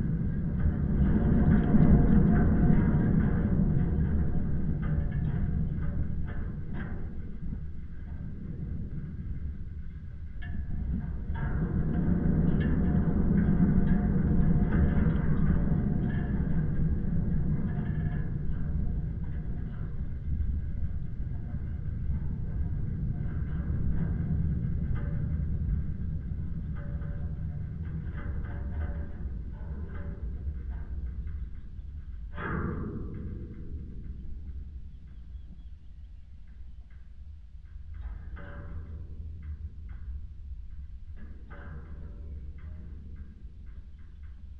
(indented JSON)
{
  "title": "Stuciai, Lithuania, iron wire gates",
  "date": "2017-05-06 14:30:00",
  "description": "contact microphones on abandoned building iron wire gates",
  "latitude": "55.45",
  "longitude": "25.87",
  "altitude": "179",
  "timezone": "Europe/Vilnius"
}